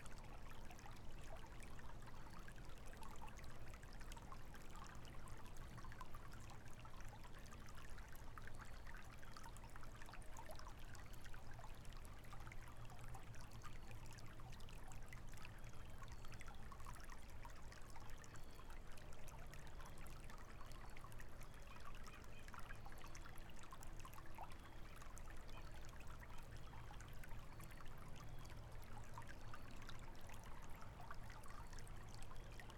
Pettys Spring, Valley Park, Missouri, USA - Pettys Spring
Ambient recording of Pettys Spring emerging from the rocky hillside into a small pool before descending to Fishpot Creek.
Saint Louis County, Missouri, United States, 7 November, ~15:00